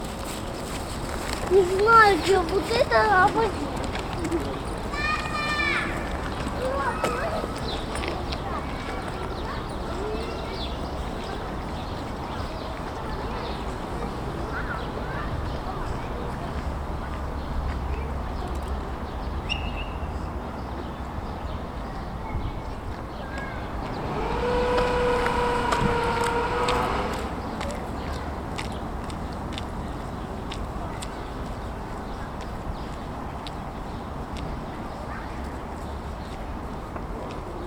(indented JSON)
{
  "title": "yard, Lasnamae, evening",
  "date": "2011-04-20 18:15:00",
  "description": "yard, evening, kids",
  "latitude": "59.44",
  "longitude": "24.87",
  "altitude": "47",
  "timezone": "Europe/Tallinn"
}